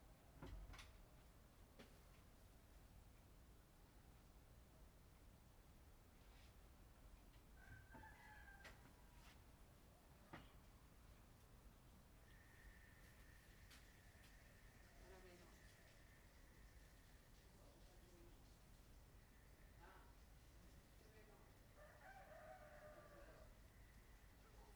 Shueilin Township, Yunlin - Early in the morning
On the second floor, Early in the morning, Chicken sounds, Zoom H6 M/S
雲林縣(Yunlin County), 中華民國, February 2014